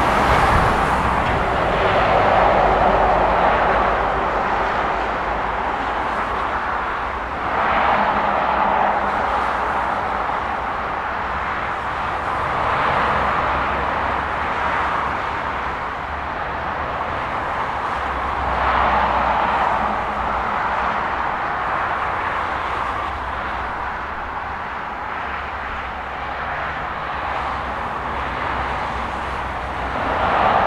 Haldon Chalets, Exeter, UK - Haldon road across A380 Telegraph Hill- Devon Wildland
This recording was made using a Zoom H4N. The recorder was positioned on the bridge over the A380 at the top of Telegraph Hill. Vehicles climbing the hill and crossing the road within Haldon Forest can be heard. This recording is part of a series of recordings that will be taken across the landscape, Devon Wildland, to highlight the soundscape that wildlife experience and highlight any potential soundscape barriers that may effect connectivity for wildlife.